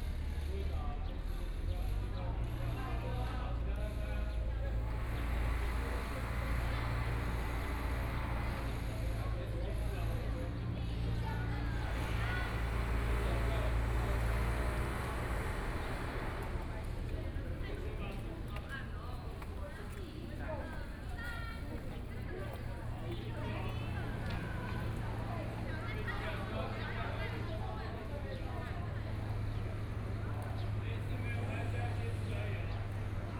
Juejiang St., Yancheng Dist. - In the Square
Art the square outside of galleries, Many students, Engineering Noise, Birds singing
Sony PCM D50+ Soundman OKM II